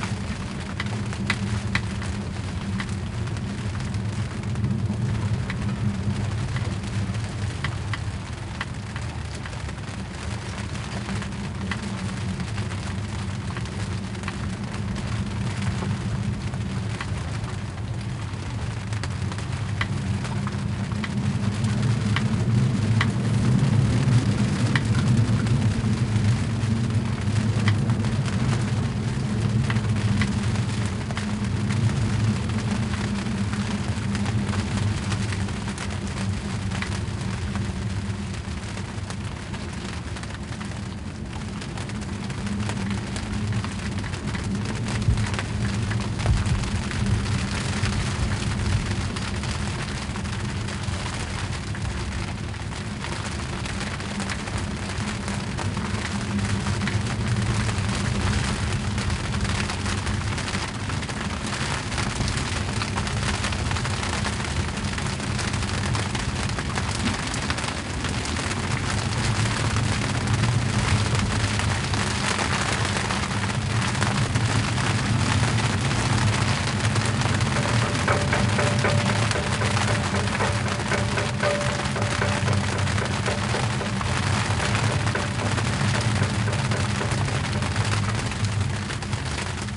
Isleornsay, Skye, Scotland, UK - Waiting Out a Storm: Anchored (Part 2)
Recorded with a pair of DPA 4060s and a Sound Devices MixPre-3
7 July 2019